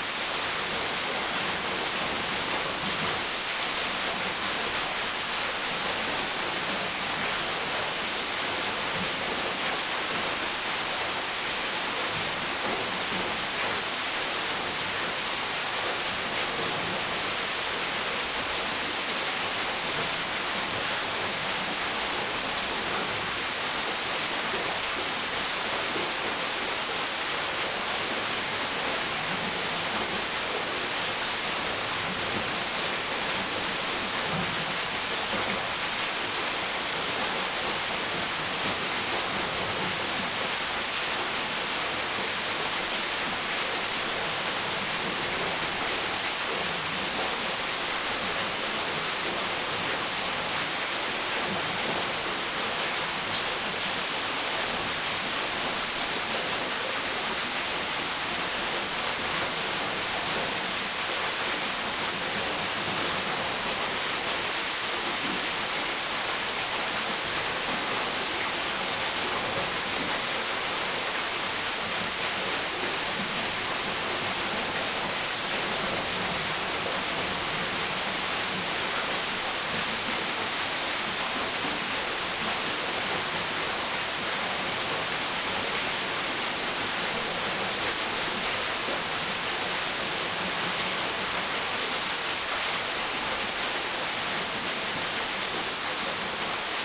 Koseška korita, Kobarid, Slovenia - Waterfall Brusnik
Waterfal Brusnik in a gorge.
Lom Uši Pro, MixPreII
Slovenija